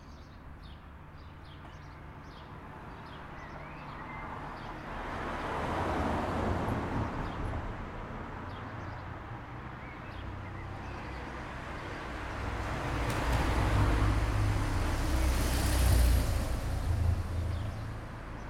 Ex border Border between Slovenia and Italy - IN - OUT
traffic on the ex border between Slovenia and Italy
Gorizia GO, Italy, June 2017